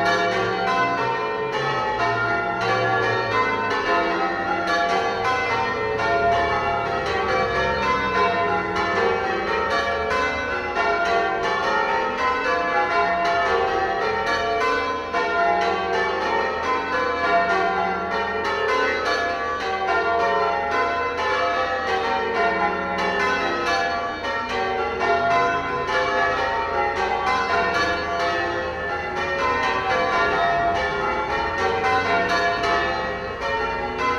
There are eight bells in the tower at St. Giles, dating back to 1793. The youngest bell was made in 1890. I adore knowing that this sound connects me to past listeners in Reading, who would have also heard the glorious sound of the bells ringing. For a long time I had thought bell ringing practice was on Thursdays, but now I know it's Wednesday, I can be sure to listen in more regularly. I love the density of microtones, semitones, harmonics and resonances in the sounds of the bells ringing, and the way they duet with the ebb and flow of traffic on Southampton Street. I was right under the tower making this recording, with my trusty EDIROL R-09.